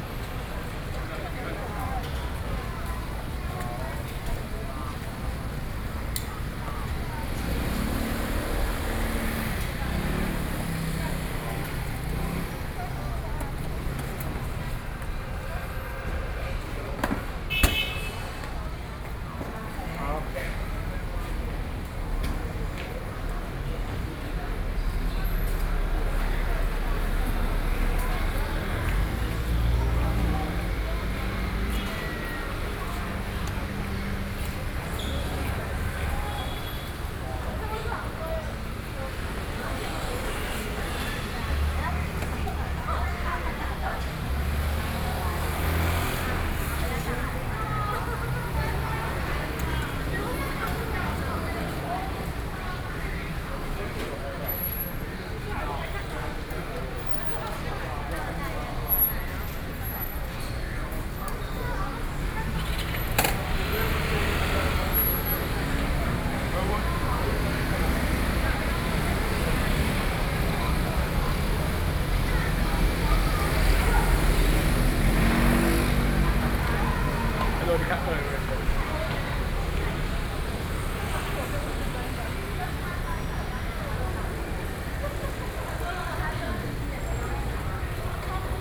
Chiayi - Cinema entrance
at the Cinema entrance, Sony PCM D50 + Soundman OKM II
Chiayi City, Taiwan, 26 July, 17:29